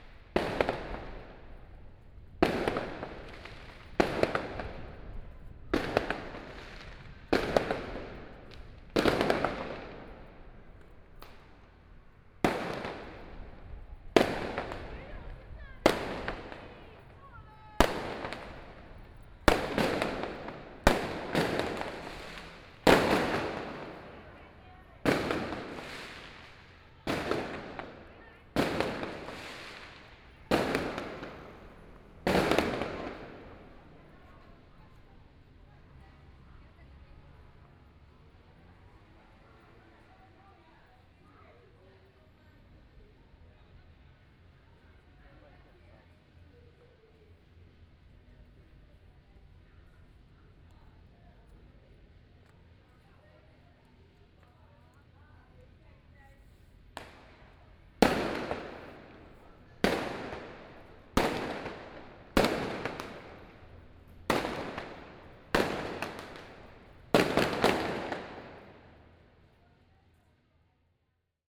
Ln., Xinxing Rd., Beitou Dist., Taipei City - Firework
Firework, Binaural recordings, Sony PCM D50 + Soundman OKM II